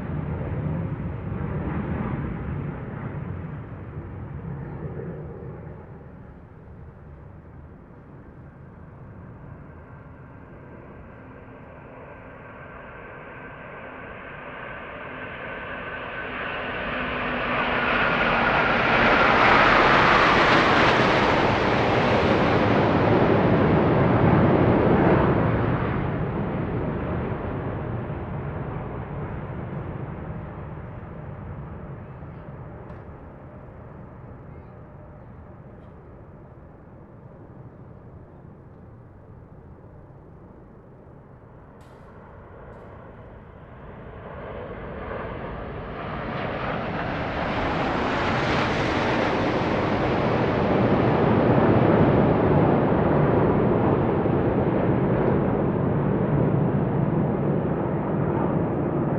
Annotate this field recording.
Recording of Royal Air Force 100th Anniversary Flyover, Tudor Road, Hackney, 10.07.18. Starts off with quieter plane formations, building to very loud.